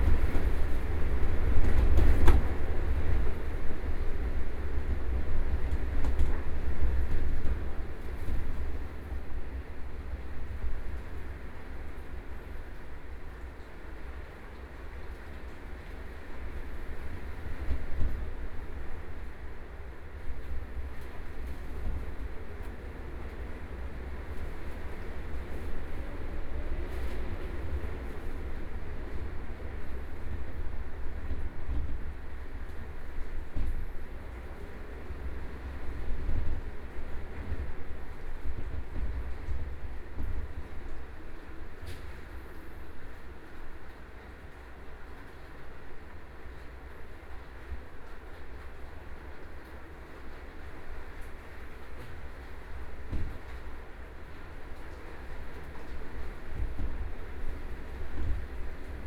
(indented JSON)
{
  "title": "Ln., Sec., Zhongyang N. Rd., Beitou Dist - Typhoon",
  "date": "2013-07-13 04:07:00",
  "description": "Strong wind hit the windows, Sony PCM D50 + Soundman OKM II",
  "latitude": "25.14",
  "longitude": "121.49",
  "altitude": "23",
  "timezone": "Asia/Taipei"
}